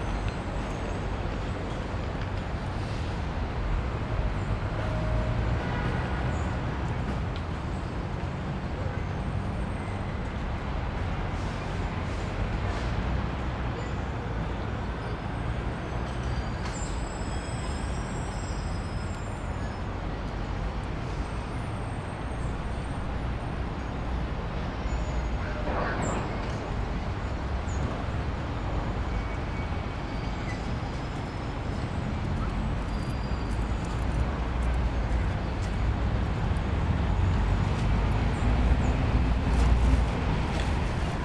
field recording from 2003 using a mini disc recorder and my (then new) audio-technica stereo mic
New York, United States of America